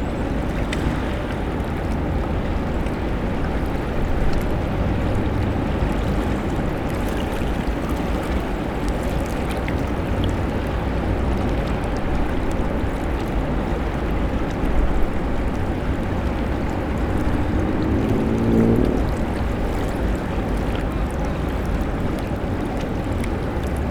Innsbruck, Waltherpark am Inn Österreich - Frühling am Inn
waltherpark, vogelweide, fm vogel, bird lab mapping waltherpark realities experiment III, soundscapes, wiese, parkfeelin, tyrol, austria, walther, park, vogel, weide, flussgeräusch, fluss, innufer, wellen, autos, motorrad anpruggen, st.